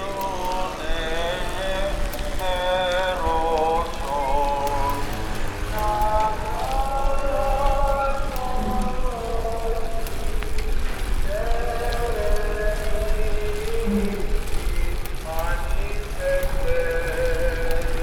Antigonos, Greece - Welcom to my village